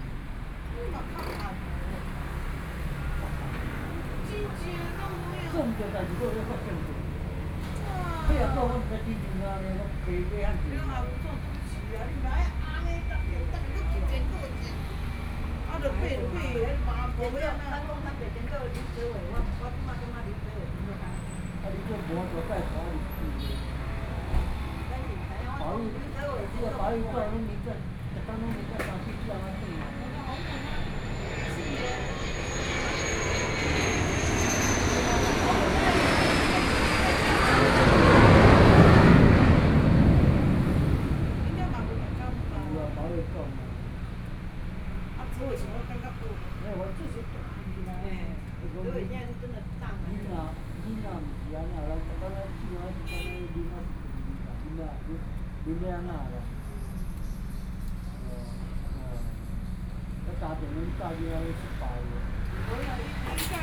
Fuji Rd., Hualien City - In front of the convenience store
In front of the convenience store, Traffic Sound, Chat, Fighter flying through
Binaural recordings
Fu'an Road, Hualien County, Taiwan, 27 August 2014, 20:06